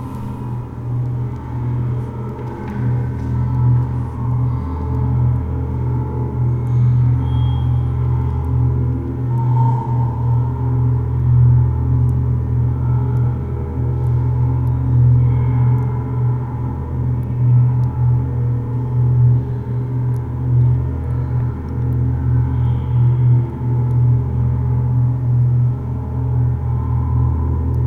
Soundwalk through the Menil Collection's west wing, housing their 'Silence' exhibition. Shoulder strap clicking, security hassle for touching a volume slider on a phone handset that was an interactive part of the exhibit, broken foot hobble, creaky floors
Binaural, CA14omnis > DR100 MK2
TX, USA